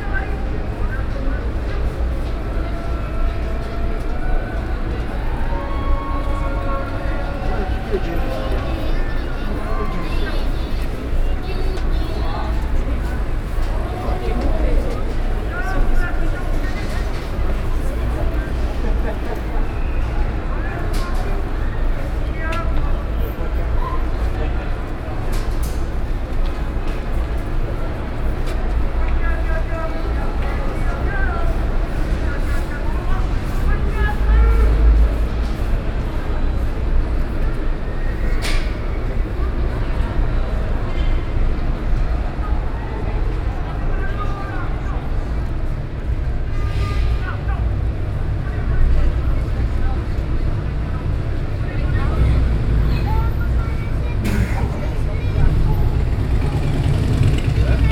Brussels, Rue de la Vierge Noire, Parking 58, Occupy Brussels.
A soundwalk in the demonstration, then up on the roof of the parking 58, air conditionning system and back in the street.